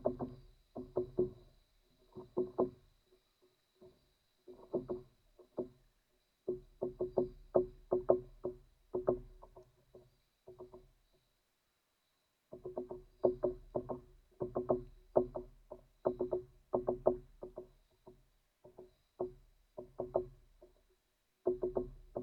Lithuania, Utena, woodpeckers morse
woodpecker morning on the top of pine-tree. recorded with contact microphone
19 October 2011, 16:15